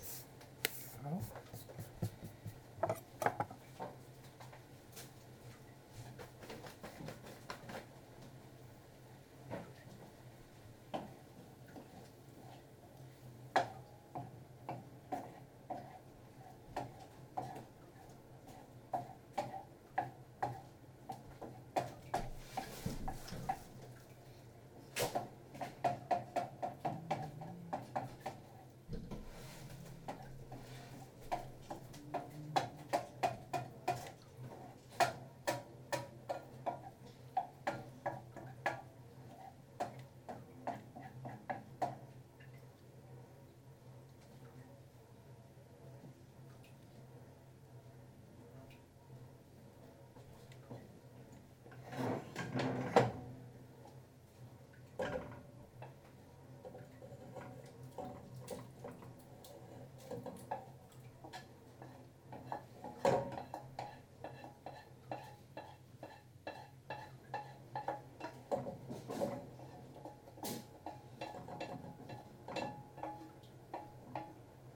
My kitchen, Reading, UK - Making dinner - Turkey Curry
I was thinking all day yesterday about everyday sounds, and had been too much on my computer all day long. To distance myself from the screen, I decided to take pleasure in making the dinner (as I often do). Standing in the kitchen I wondered how many countless times have I listened to this combination of Mark and his children chatting in the other rooms, the noise of the dishwasher, and all of the little culinary noises which result from preparing our food. This is the soundscape of my home. There is no sound I like better, the moment my key is in the door and I hear the familiar warm, woody acoustics of this place, I feel safe and happy and loved. This the soundtrack of a totally normal, completely uneventful Monday night making a curry. It is the most mundane and precious collection of little sounds I can imagine - the sound of the compost bin as I clack it open with my foot, the lovely round dings the saucepans that we bought a few years ago make when I stir in them.